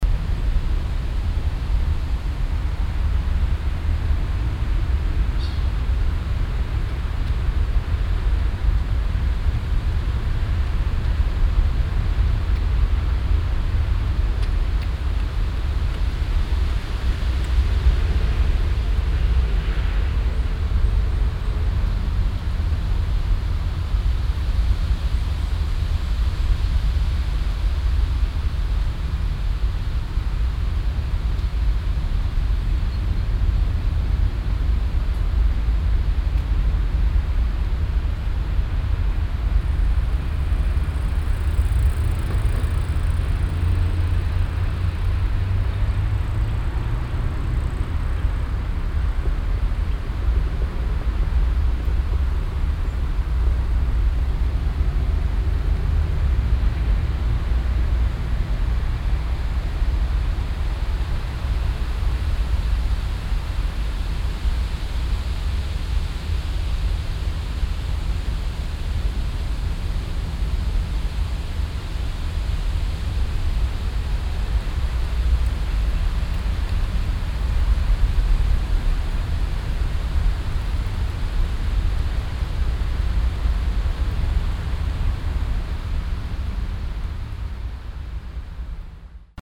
Mittags am kleinen Seeteil nahe zweier stark befahrener Strassen.
Verkehrsrauschen und eine Windböe in den Bäumen.
soundmap nrw: topographic field recordings & social ambiences
hofgarten, see an heinrich heine allee, 21 August, 10:57